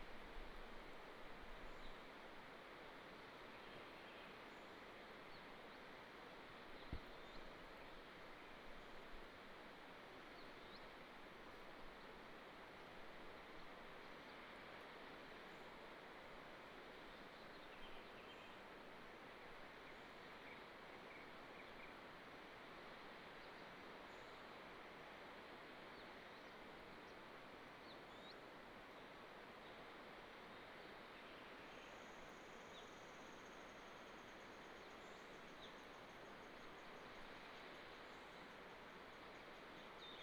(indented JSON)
{
  "title": "Tuban, 達仁鄉台東縣 - At the edge of the mountain wall",
  "date": "2018-04-06 07:12:00",
  "description": "Stream sound, At the edge of the mountain wall, Bird call, Birdsong across the valley",
  "latitude": "22.44",
  "longitude": "120.86",
  "altitude": "257",
  "timezone": "Asia/Taipei"
}